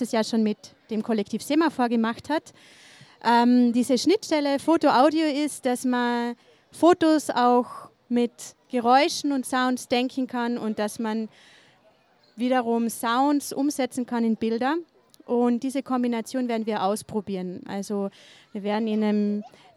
Innstraße, Innsbruck, Österreich - fm vogel Abflug Birdlab Mapping Waltherpark Realities
Canesianum Blasmusikkapelle Mariahilf/St. Nikolaus, vogelweide, waltherpark, st. Nikolaus, mariahilf, innsbruck, stadtpotentiale 2017, bird lab, mapping waltherpark realities, kulturverein vogelweide, fm vogel extrem, abflug birdlab
Innsbruck, Austria